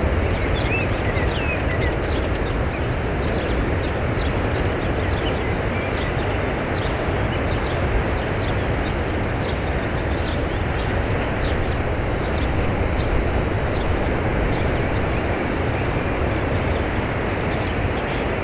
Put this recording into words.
Early morning. Waves in background and bird songs. Tôt au matin. Bruit des vagues et chants des oiseaux.